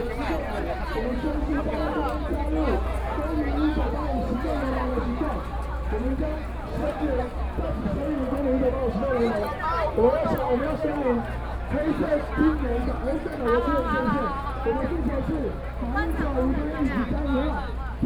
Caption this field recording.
Protest, Roads closed, Sony PCM D50 + Soundman OKM II